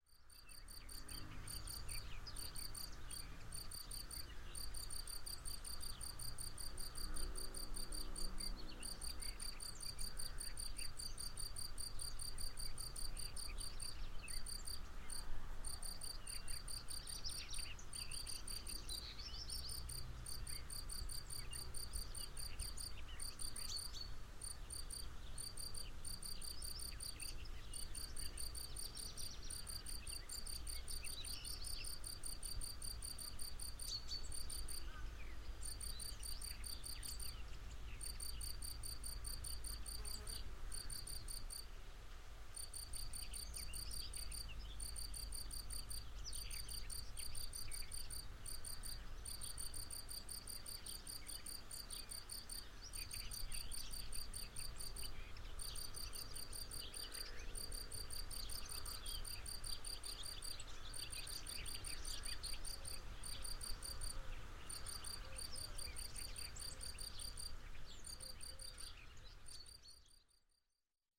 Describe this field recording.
Sunny afternoon in Miedniewice near Warsaw. Oktava MK-012 pair in ORTF, Fostex FR2LE.